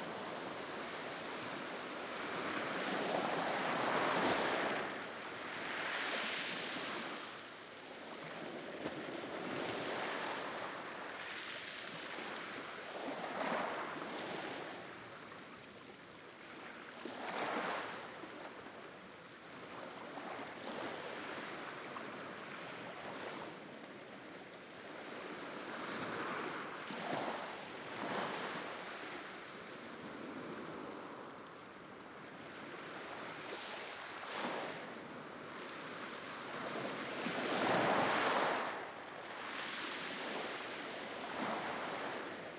Cap-negret, Altea, Alicante, Espagne - Altea - Espagne Plage de Cap Negret
Altea - Province d'Alicante - Espagne
Plage de Cap Negret
Ambiance 2 - vagues sur les galets
ZOOM F3 + AKG 451B